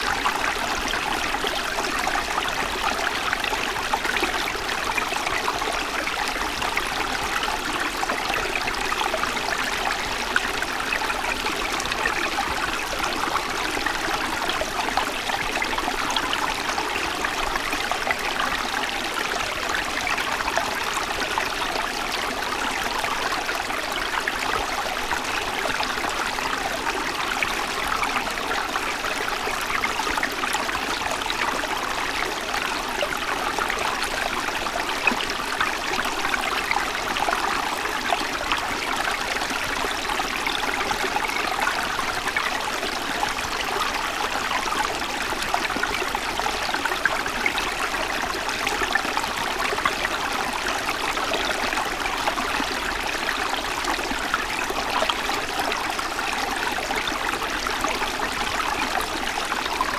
SBG, Les Llobateres - Riera
Riera de Les Llobateres, a su paso por una de las áreas más vírgenes del entorno natural de Sant Bartomeu.
Spain, 6 August 2011, 14:00